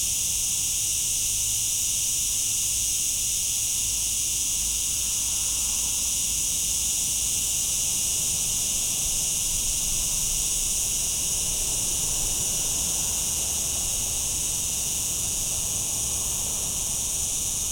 Portugal - Cicadas Alqueva
Cicada chorus captured in the Summer of 2018 in Alqueva.